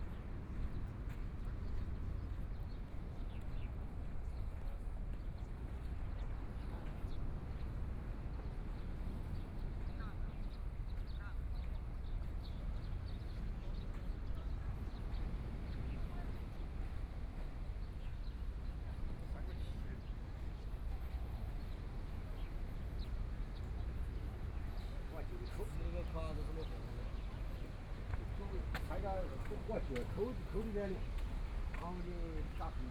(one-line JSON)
{"title": "旗津海水浴場, Kaoshiung City - Walking on the beach", "date": "2014-05-14 14:37:00", "description": "Walking on the beach, Sound of the waves, Hot weather, Tourist", "latitude": "22.61", "longitude": "120.27", "altitude": "4", "timezone": "Asia/Taipei"}